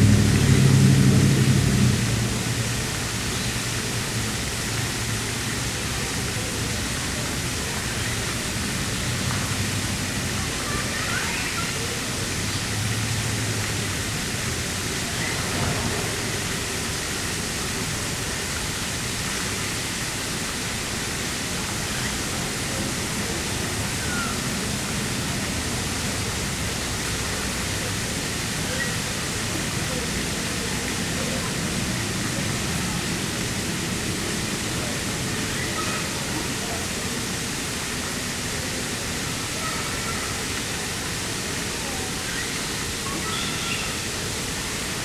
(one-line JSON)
{"title": "Riverside Park, Roswell, GA, USA - Riverside Park - Sprayground", "date": "2021-06-28 15:29:00", "description": "A recording taken from a table across from the miniature waterpark area at Riverside Park. Lots of water sounds and children playing. Noise from the road and parking lot also bleeds over into the recording.\n[Tascam DR-100mkiii w/ Primo EM-272 omni mics, 120hz low cut engaged]", "latitude": "34.01", "longitude": "-84.35", "altitude": "265", "timezone": "America/New_York"}